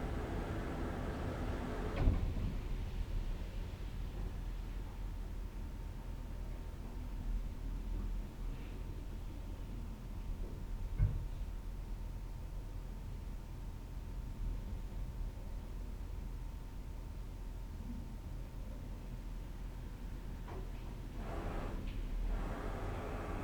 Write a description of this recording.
tourists in adjacent room got up early. their bathroom was build into our room, walls made out of plywood. sounds of shower and water flowing in pipes at different pressure. various pounding sounds as they move around their room. the recorder wasn't set to high gain so you can imagine how loud the sound of their shower was. interesting sonic experience in a hotel at five in the morning.